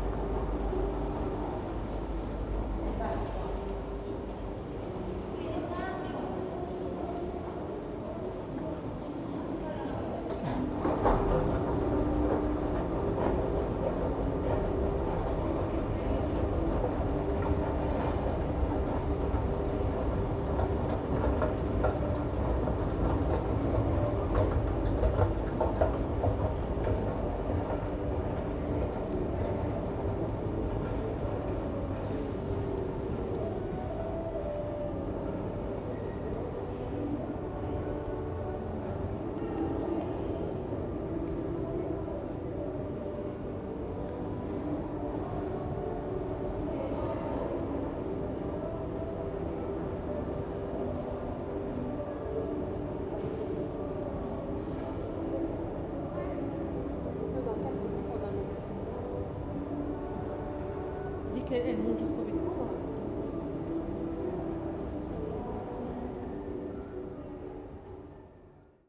Anderlecht, Belgium - Underground in Jacque Brel metro station; train and music
Brussels Metro stations play music (usually very bland). It's a unique characteristic of the system. It's always there, although often not easy to hear when drowned out by trains, people and escalator noise. But when they all stop it is quietly clear.
15 October 2016